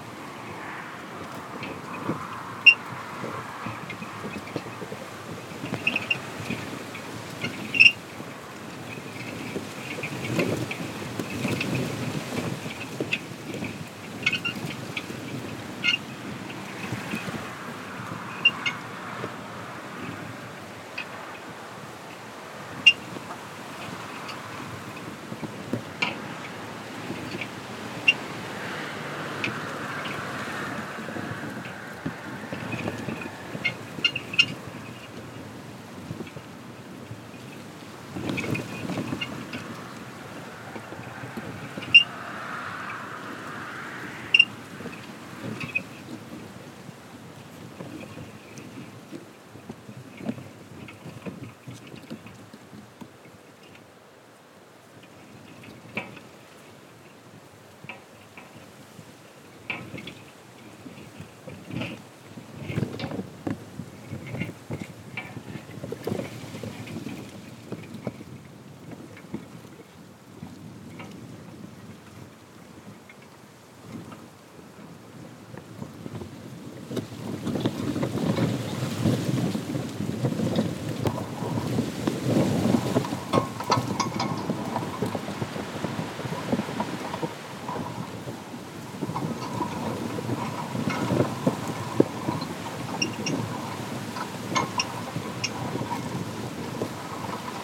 The sound of wind in the vegetation and an old metal signpost announcing the name of a vine field.